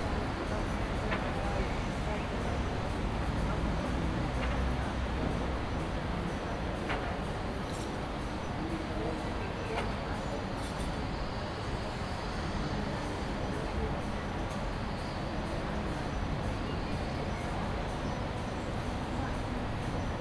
Eda center, Nova Gorica, Slovenia - Traffic in the city
The is a new bar in town and is not that great. Waiting for the piece of pizza an listening to the sounds of the street.